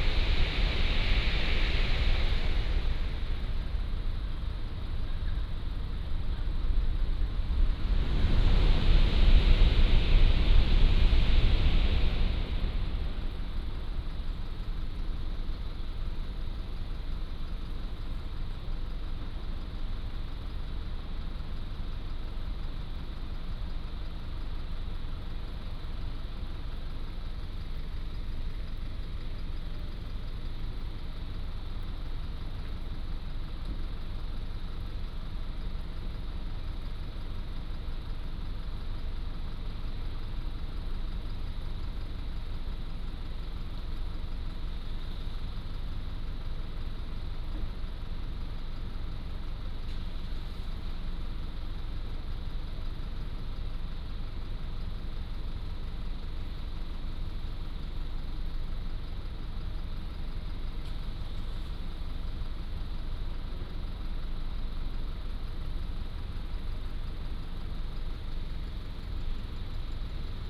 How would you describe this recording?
the square of the station, High-speed train passing through, Footsteps